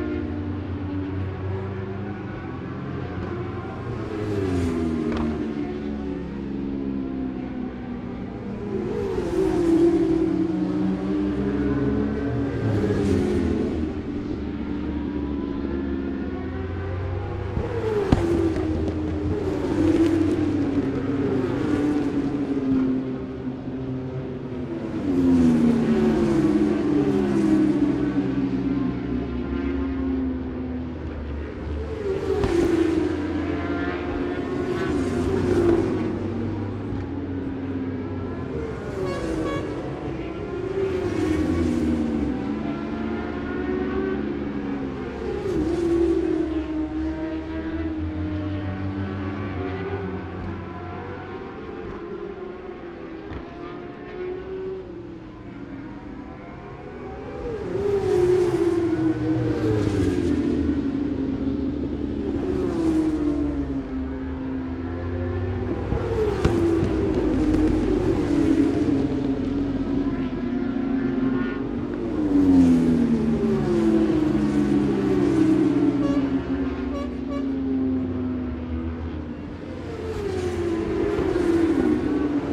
{"title": "Scratchers Ln, West Kingsdown, Longfield, UK - British Superbikes 2005 ... FP2 ...", "date": "2005-03-26 20:03:00", "description": "British Superbikes 2005 ... FP 2 ... audio technica one point stereo mic ...", "latitude": "51.36", "longitude": "0.26", "altitude": "133", "timezone": "Europe/London"}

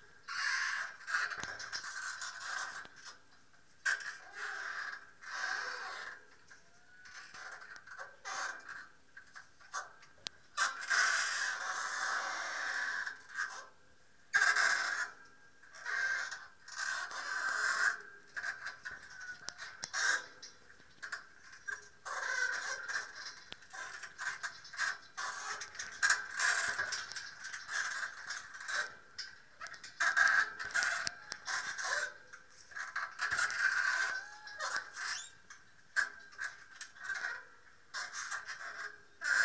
{"title": "Johannisbollwerk, Hamburg, Deutschland - hafen potons", "date": "2007-01-30 04:17:00", "description": "hafenpotons, contact micro", "latitude": "53.54", "longitude": "9.97", "altitude": "3", "timezone": "Europe/Berlin"}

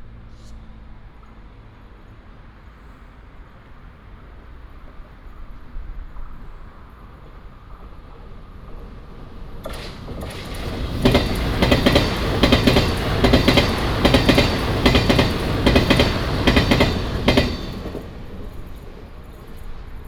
{"title": "普忠路, Zhongli Dist., Taoyuan City - train runs through", "date": "2017-08-26 15:37:00", "description": "the train runs through, traffic sound", "latitude": "24.96", "longitude": "121.24", "altitude": "127", "timezone": "Asia/Taipei"}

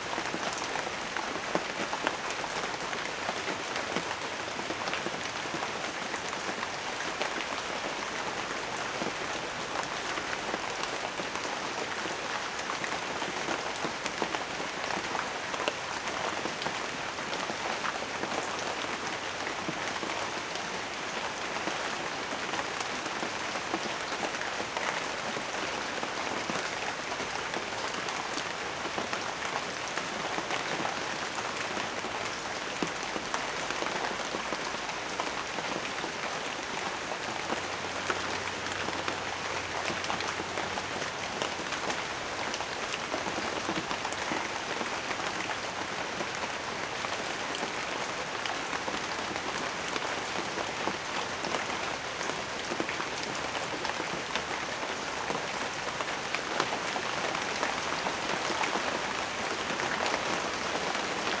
{"title": "Telaya, Veracruz, Mexico - Light Rain", "date": "2020-02-04 10:00:00", "description": "Light rain in a field of bananas trees\nAB setup by 2 B&k 4006", "latitude": "20.16", "longitude": "-96.86", "altitude": "10", "timezone": "America/Mexico_City"}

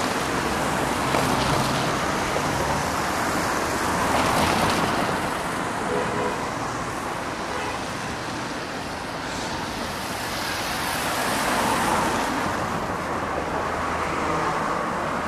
{"title": "Fullmoon Nachtspaziergang Part I", "date": "2010-10-23 22:15:00", "description": "Fullmoon on Istanbul, nightwalk from Fulya through Şişli to Nışantaşı. Part I", "latitude": "41.06", "longitude": "29.00", "altitude": "33", "timezone": "Europe/Istanbul"}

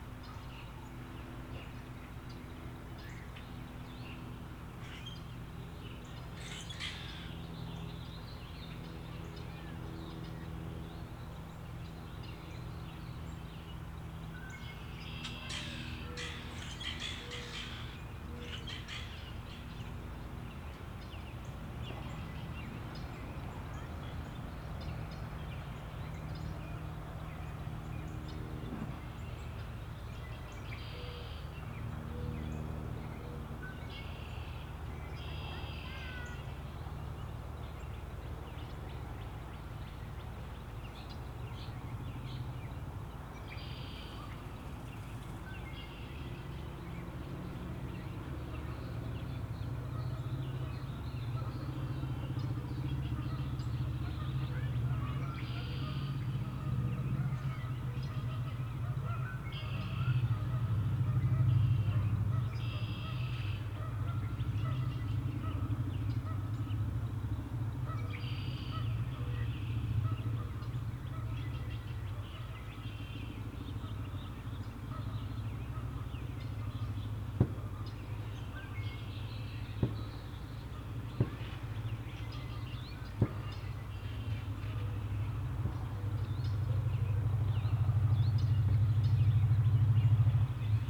{"title": "Waters Edge - Spring in the Backyard", "date": "2022-04-21 18:10:00", "description": "After days of cool rainy weather we finally had a sunny and relatively warm day which brought out a lot of the wildlife sounds.", "latitude": "45.18", "longitude": "-93.00", "altitude": "278", "timezone": "America/Chicago"}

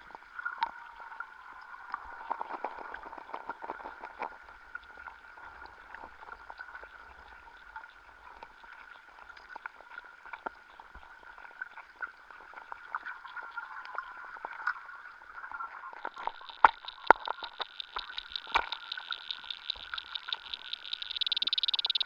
{"title": "Narkūnai, Lithuania, pond underwater", "date": "2022-05-16 17:20:00", "description": "Underwater microphones in a pond near abandoned raillway", "latitude": "55.47", "longitude": "25.56", "altitude": "125", "timezone": "Europe/Vilnius"}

